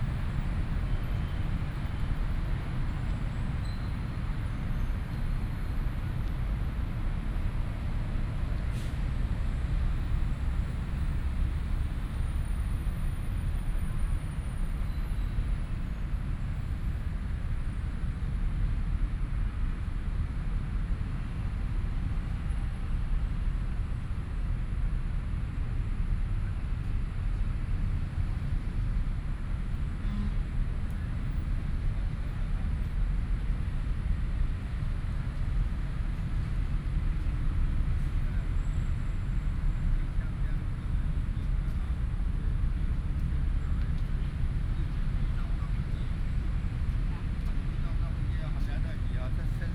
赤土崎公園, Hsinchu City - City Ambiences
Off hours, in the park, traffic sound, City Ambiences, Binaural recordings, Sony PCM D100+ Soundman OKM II
East District, Hsinchu City, Taiwan